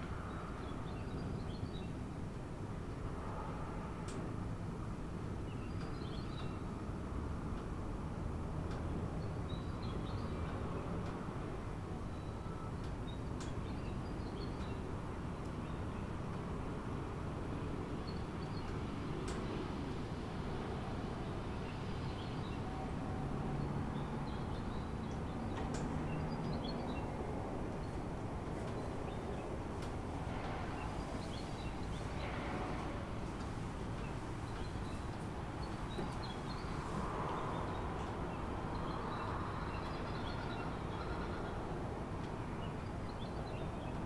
Bretwalder Ave, Leabrook SA, Australia - Soundscape before dawn
Recording from 5:10 am (fifty minutes before sunrise). Within the general distant traffic ambience, you can hear, in early part of the recording, after a distant dog barking, in the foreground, a tawny frogmouth hooting; later, amongst other birds such as magpies, you can hear distant kookaburras.